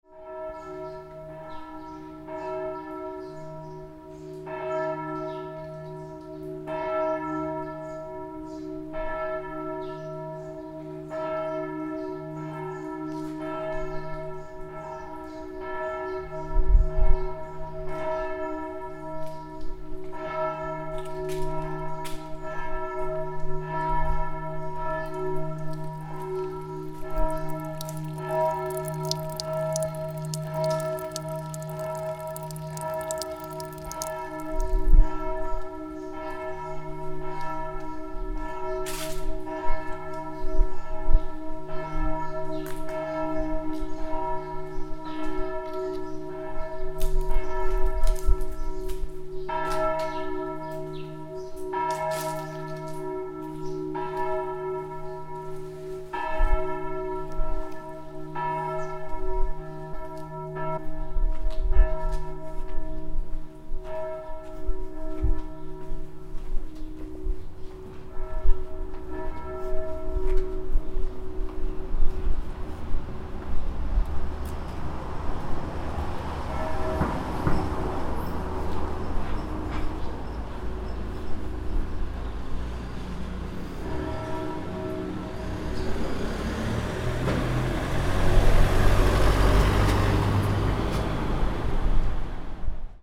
Platz vor Palazzo Salis
Palazzo Salis, Tirano, Italien, Platzgeräusche davor